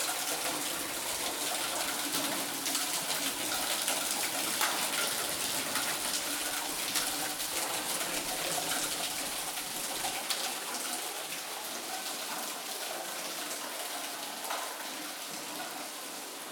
Mériel, France - Wandering Around the Source in a undergroud Quarry
Il y a une source dans les carrières abandonnées d'Hennocque, nous essayons de trouver notre chemin à travers les couloirs inondés.
Some of the tunnels of the abandonned Quarry Hennocque are flooded.
We are trying to find our way around the water source.
/zoom h4n intern xy mic